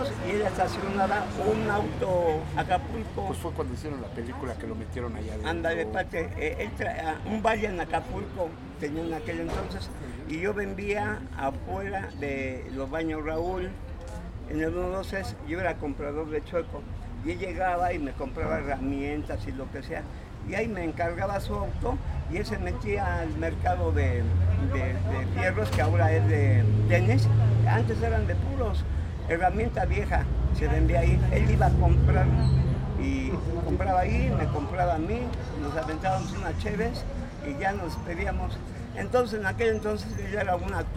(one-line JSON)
{"title": "Calle Peralvillo, Tepito, Col. Morelos - El Tirantes de Tepito", "date": "2016-09-10 12:15:00", "description": "Encuentro con Arturo Ayala Plascencia \"Tirantes\", uno de los habitantes y personajes más conocidos de Tepito. Su historia y su vida fue inmortalizada en el cine con la película \"Lagunilla mi barrio\", protagonizada por Héctor Suárez. Tirantes recuerda ese episodio entre broma y broma, pronunciando además su famoso grito.\nGrabación realizada con una Tascam DR-40", "latitude": "19.44", "longitude": "-99.13", "altitude": "2238", "timezone": "America/Mexico_City"}